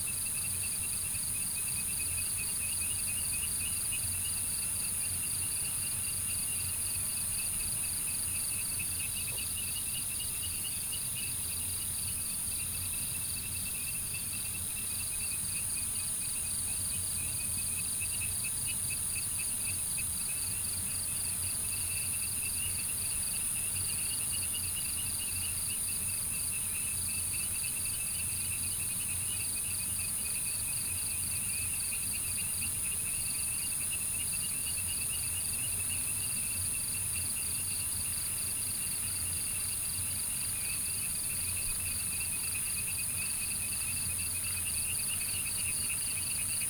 茅埔坑, Taomi Ln., Puli Township - Insects called
Frogs chirping, Small road at night, Insects called
Nantou County, Puli Township, 桃米巷11-3號, 10 August 2015, ~20:00